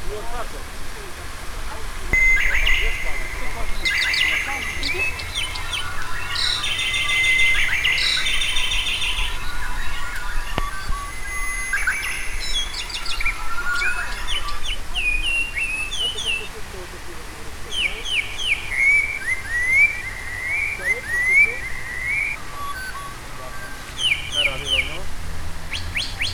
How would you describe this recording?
BIRDS - sound installation by Ludomir Franczak during Survival 2011